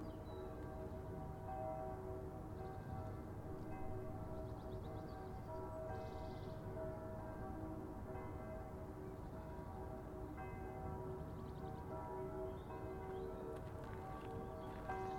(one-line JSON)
{"title": "Stanz bei Landeck, Stanz bei Landeck, Österreich - Burg Schrofenstein", "date": "2019-06-07 10:35:00", "description": "Glockenläuten; Schritte im Kies; Vogelstimmen.", "latitude": "47.16", "longitude": "10.56", "altitude": "1110", "timezone": "Europe/Vienna"}